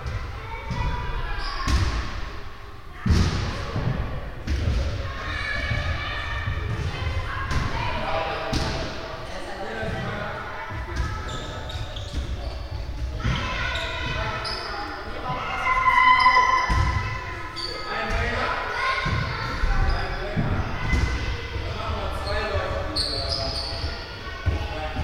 refrath, at market place, gym hall
soundmap nrw: social ambiences/ listen to the people in & outdoor topographic field recordings